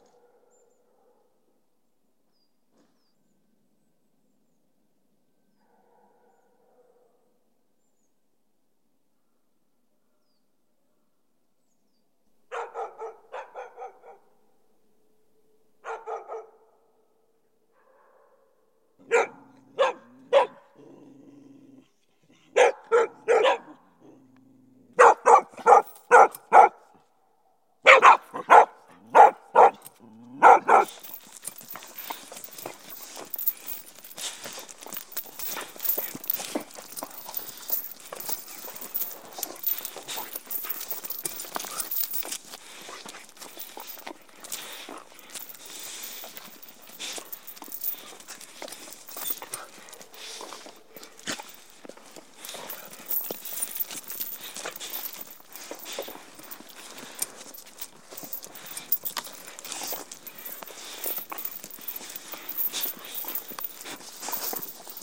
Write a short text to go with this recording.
along a rural dirt road groups of dogs mark the entrance to each farm house, and bark vigorously at any wanderer walking the snow covered track to the small temple at the base of the hill. Though they make such noise, when I approached some of the dogs they were keen for some affection. Sony PCM-10.